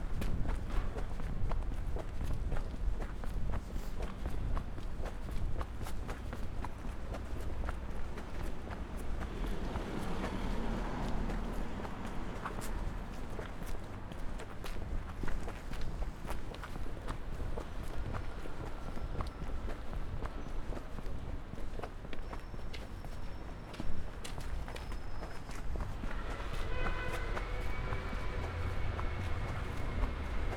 Bulevardul geberal Gheorghe Magheru, walking to Calea Victoriei